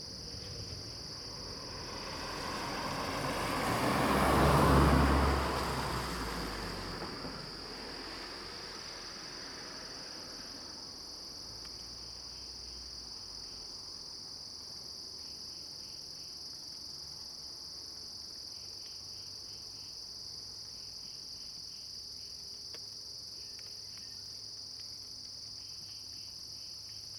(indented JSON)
{
  "title": "水上巷, 桃米里, Puli Township - Cicadas cries",
  "date": "2016-09-17 07:31:00",
  "description": "Facing the woods, Raindrop sound, Cicadas cries\nZoom H2n MS+XY",
  "latitude": "23.94",
  "longitude": "120.92",
  "altitude": "538",
  "timezone": "Asia/Taipei"
}